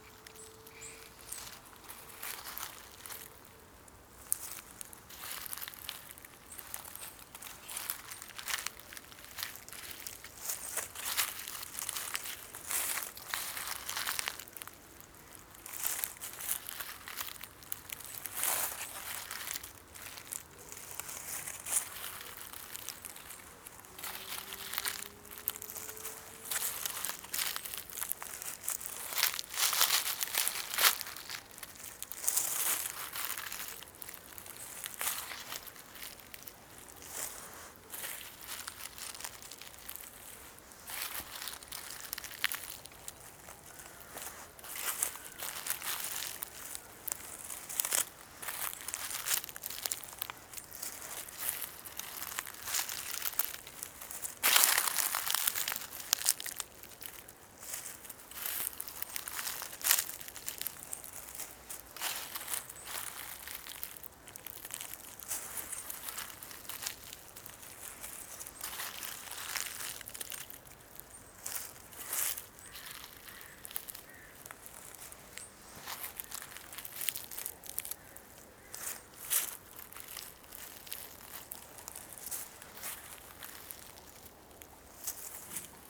Wet zones, Pavia, Italy - Grounding on the dead leaves
few steps barefoot on oak dead leaves, acorns and brushes